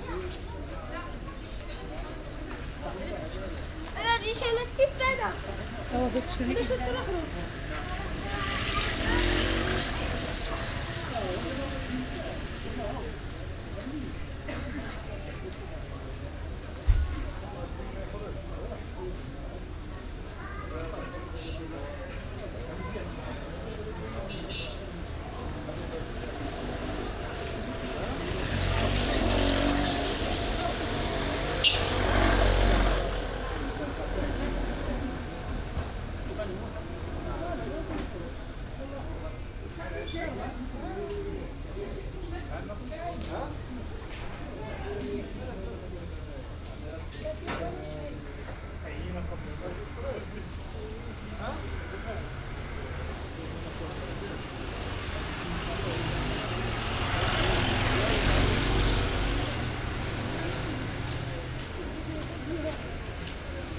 Busy early evening street corner at Route Sidi Abdelaziz
(Sony D50, OKM2)
Marrakesh, Morocco, 28 February 2014, 18:00